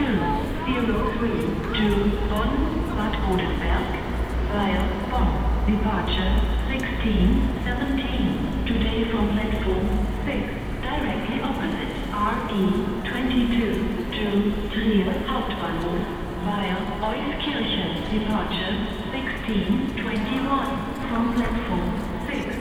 Trankgasse, Köln, Duitsland - Köln Hauptbahnhof
Binaural recording of general atmosphere at the platforms.